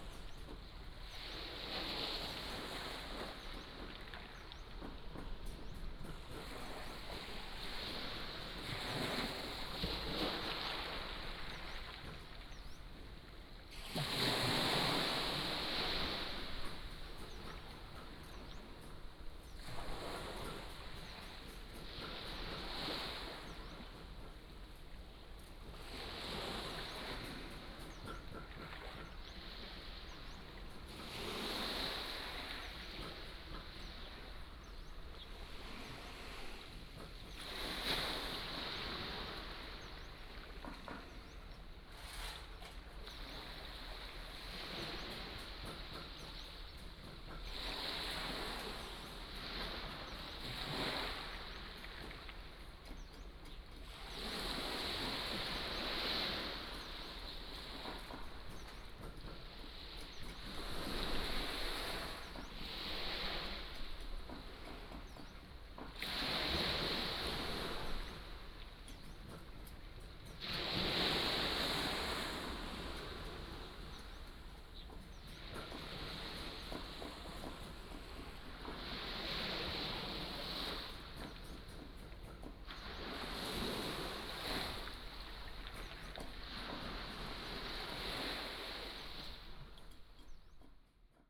芙蓉澳, Nangan Township - Small pier
Sound of the waves, Small village, Small pier
連江縣, 福建省 (Fujian), Mainland - Taiwan Border, 14 October 2014, 11:35